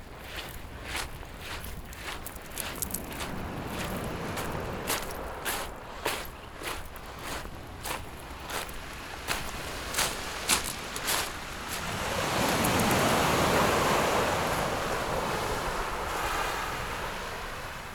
內埤灣, 南方澳, Su'ao Township - Sound of the waves
Sound of the waves, At the beach
Zoom H6 MS+ Rode NT4
Yilan County, Taiwan